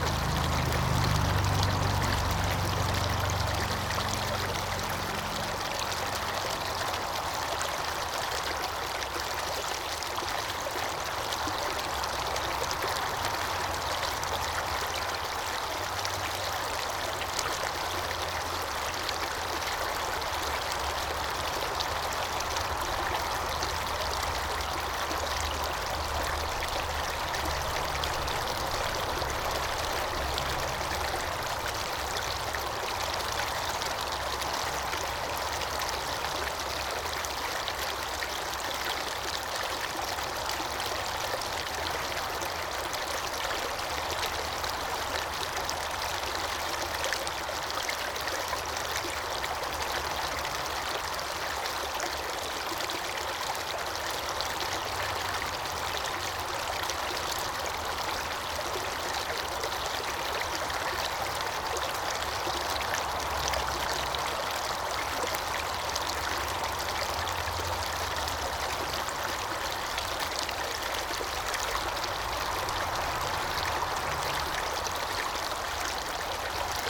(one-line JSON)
{"title": "Listening by the stream through the Glen of the Downs Nature Reserve, Co. Wicklow, Ireland - The stream through the Glen", "date": "2017-07-29 13:30:00", "description": "This is the sound of the stream running through the Glen of the Downs, combined with the stream of traffic that runs through the N11. Recorded with EDIROL R09.", "latitude": "53.14", "longitude": "-6.12", "altitude": "117", "timezone": "Europe/Dublin"}